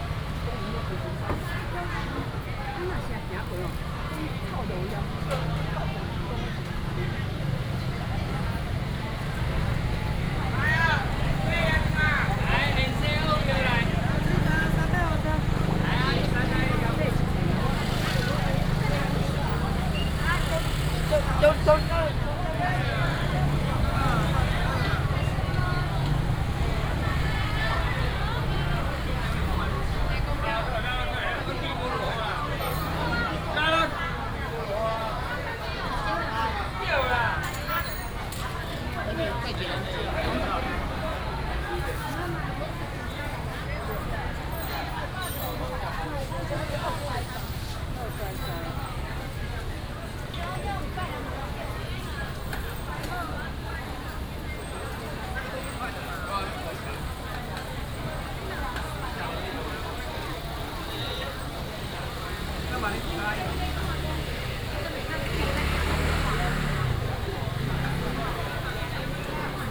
民享街, Banqiao Dist., New Taipei City - Traditional market
Traditional market, vendors peddling, traffic sound